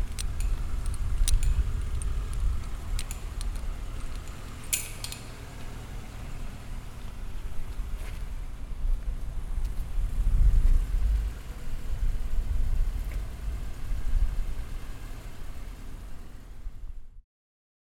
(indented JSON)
{
  "title": "Binckhorst, Laak, The Netherlands - field recording workshop",
  "date": "2012-05-21 12:30:00",
  "description": "recording cars sounds and the sounds near the fence.",
  "latitude": "52.07",
  "longitude": "4.33",
  "altitude": "1",
  "timezone": "Europe/Amsterdam"
}